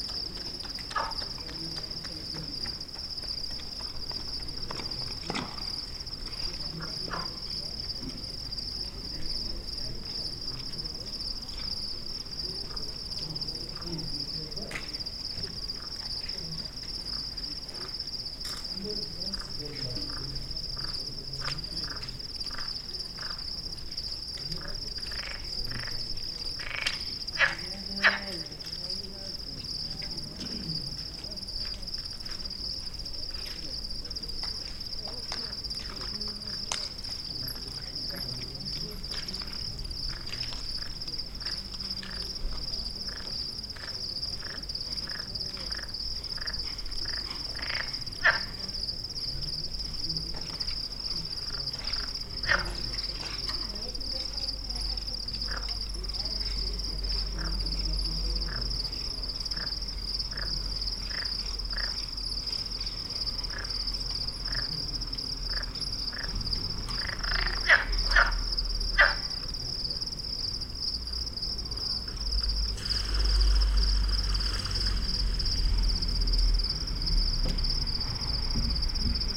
Tafraout, Riverside, Frog and insects
Africa, Morocco, frog, insects, night